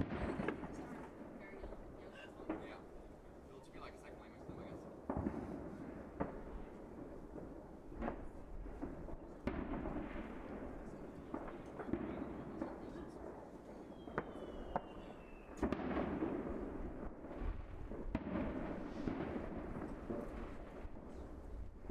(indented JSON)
{
  "title": "Gladwin Rd, Colchester, UK - New Years Eve Ambience, 2018-19",
  "date": "2018-01-01",
  "description": "Recording from my friends balcony in a street in Colchester, listening to the fireworks and people singing in the surrounding gardens etc. Recorded with Mixpre6 and USI Pro - original recording was 2 hours long but I decided to cut to the main part, as the rest was just silence or distant talking",
  "latitude": "51.88",
  "longitude": "0.88",
  "altitude": "38",
  "timezone": "GMT+1"
}